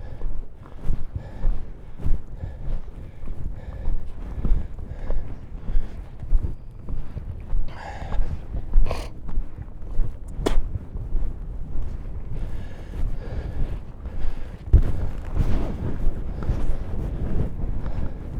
neoscenes: hiking the Continental Divide
Buena Vista, CO, USA